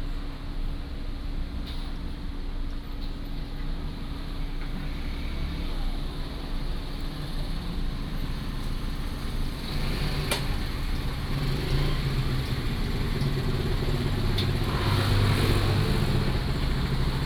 Changbin Township, Taiwan - In the convenience store door
In the convenience store door
Changbin Township, 花東海岸公路2號, September 2014